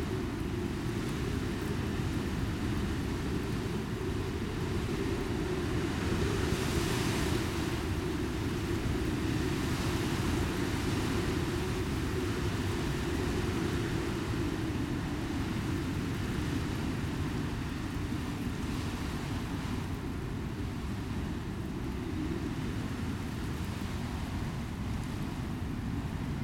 Troon, Camborne, Cornwall, UK - Howling Wind
Very windy day, the sound is the wind passing over electrical wires and through the hedgerows. Recorded with DPA4060 microphones and a Tascam DR100.
31 January 2015